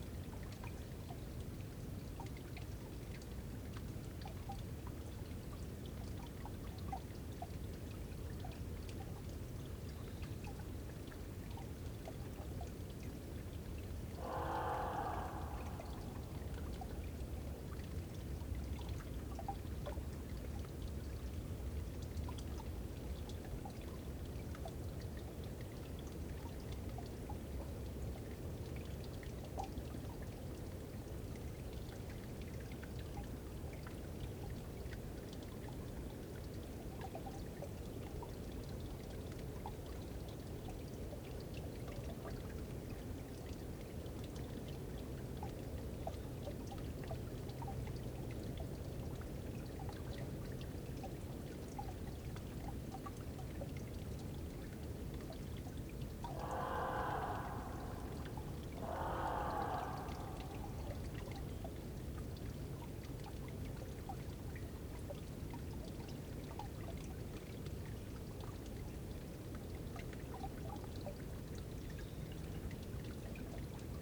Forêt d'Éperlecques, France - Corbeaux D'Eperlecques
Corbeaux dans clairière forêt d'Eperlecques, en hiver.
11 February, ~18:00